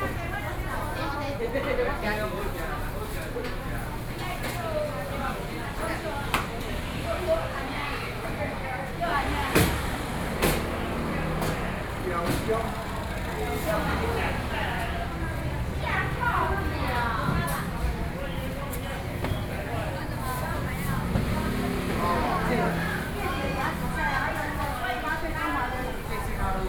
Ln., Zhongyang Rd., Luzhou Dist., New Taipei City - Traditional markets
New Taipei City, Taiwan